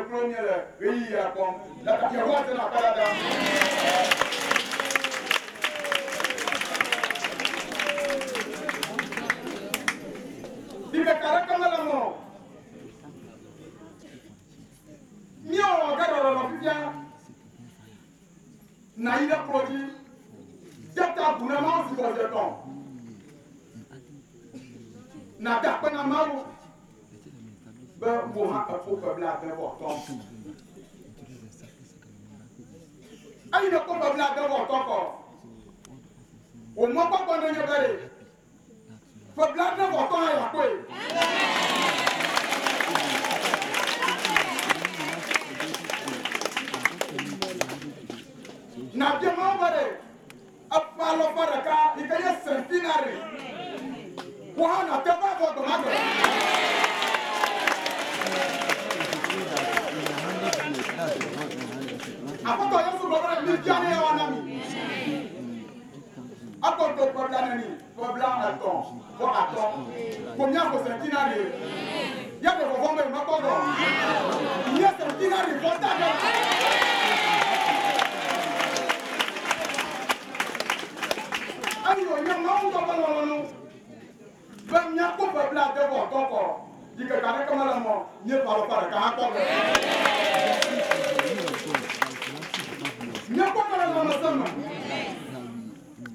Togbe Tawiah St, Ho, Ghana - church of ARS beginning of service
It was just after darkness fell we arrived at the church. Service was in the open air and a big fire was lightning the place. There we portrets of Wovenu and a small amplifier with microphone.